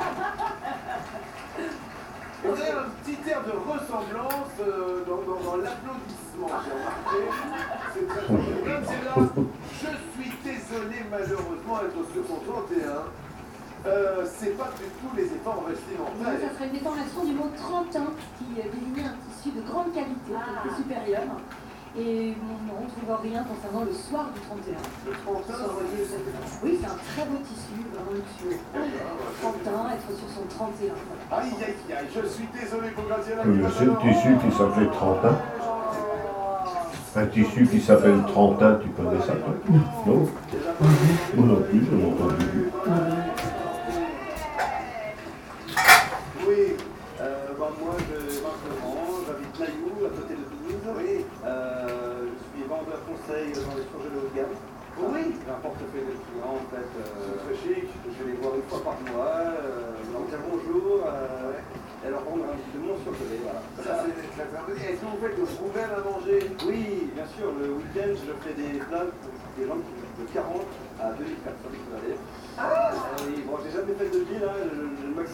In the kitchen of two old persons. They are eating their lunch. Sometimes there's some long ponderous silences. I made no changes to this recording.